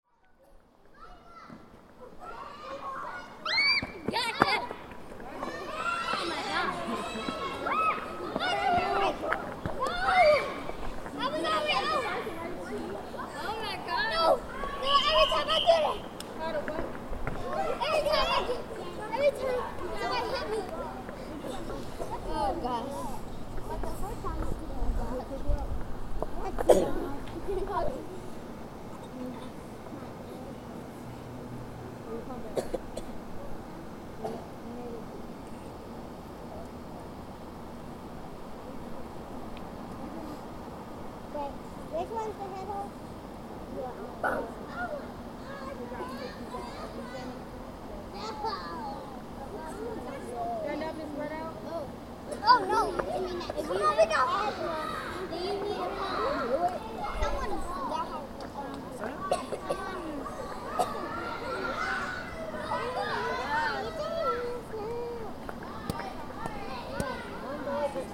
Recorded outside of an elementary school playground during recess. Recorder was a Tascam DR-40 using the built-in stereo microphones.